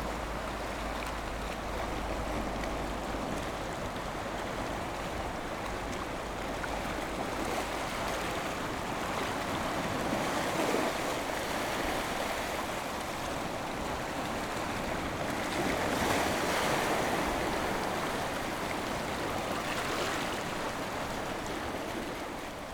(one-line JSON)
{"title": "San Diego, New Taipei City - Sound of the waves", "date": "2014-07-21 13:58:00", "description": "On the coast, Sound of the waves\nZoom H6 MS mic+ Rode NT4", "latitude": "25.02", "longitude": "122.00", "timezone": "Asia/Taipei"}